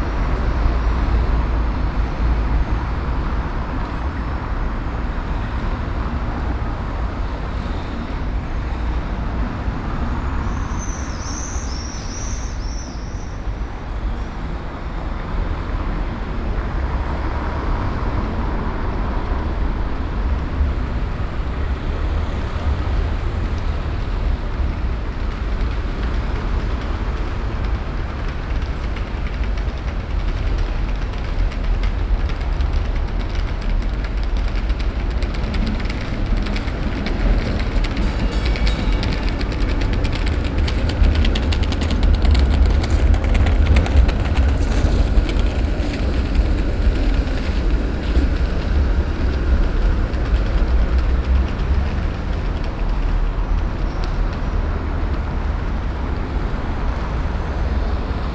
Brussels, Rue du Bailly / Parvis de la Trinité.
Bells, birds, trams and unfortunately too many cars.
Ixelles, Belgium, 13 May